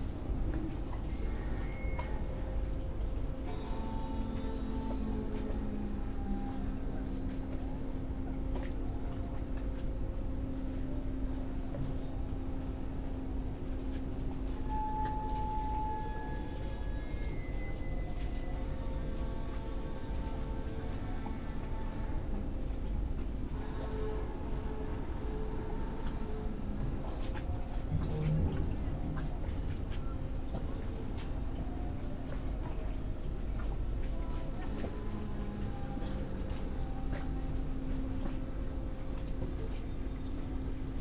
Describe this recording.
Sunday afternoon in Spring, under a group of trees at he banks of river Spree, gentle waves, sounds of the cement factory opposite, pedestrians, people on boats, (Sony PCM D50, DPA 4060)